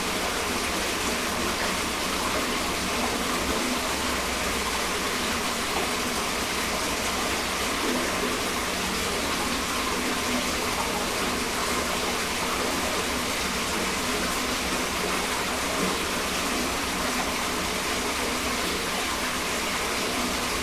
Birštonas, Lithuania, hidden well
Some kind of hidden, closed well in the park near mound. Small omni mics inside.